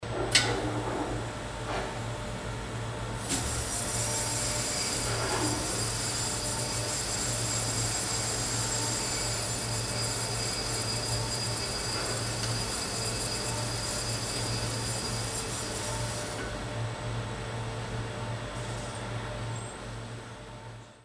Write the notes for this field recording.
Here the sound as the mash tun is getting heated. Heinerscheid, Cornelyshaff, Brauerei, Erhitzen der Maische, Hier das Geräusch, wenn der Maischebottich erhitzt wird. Heinerscheid, Cornelyshaff, chauffage de la matière, On entend maintenant le bruit de la cuve-matière qui chauffe.